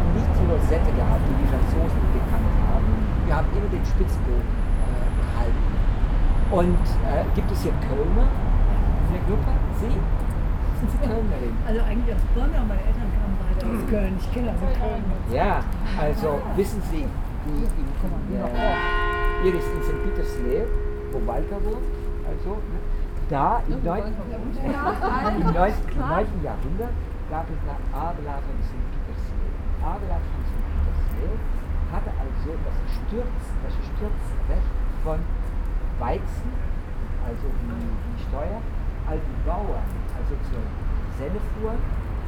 Cathédrale Saints-Michel-et-Gudule, Place Sainte-Gudule, Bruxelles, Belgium - cathedral between money and money...
Excerpts from a nightly walk through Brussels with Stephaan; a bit of out-door tourism during a study trip on EU migration-/control policy with Iris and Nadine of v.f.h.